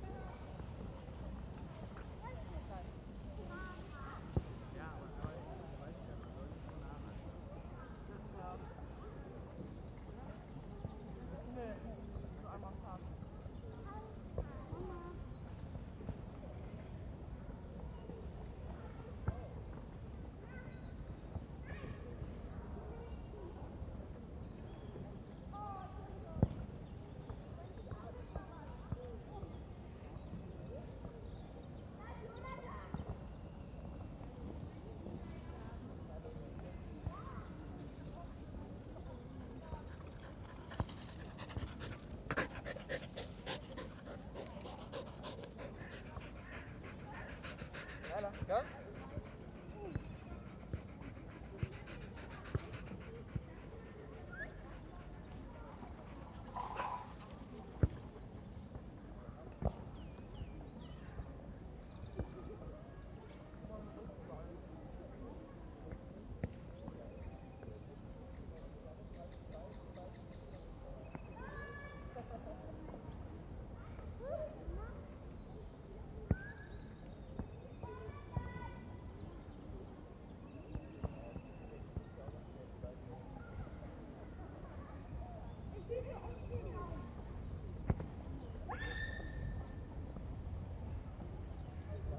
Palace Park, Am Schloßpark, Berlin, Germany - 2 days of Covid-19 lockdown: park in bright sun, biting cold
2 days after the Covid-19 restriction have banned meetings of more than 2 people, except families living together. Gone are the large groups of teenagers and 20 somethings socializing. Now it's single people huddled against the wind or mum/dad kicking a football with a young son (no daughters to be seen). But maybe this is partly because it's so cold. Birds are singing less than a few days ago. And during recording no planes flew overhead (the park is directly below the Tegel flight path and normally one passes every 3 or 4 minutes).
Deutschland